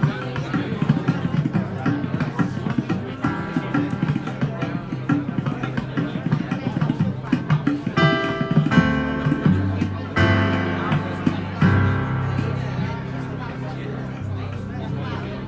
C/ Major, Bellcaire d'Empordà, Girona, Espagne - Belcaire d'Emporda - Espagne - Restaurant L'Horta
Belcaire d'Emporda - Espagne
Restaurant L'Horta
Ambiance du soir avec des "vrais" musiciens
Prise de sons : JF CAVRO - ZOOM H6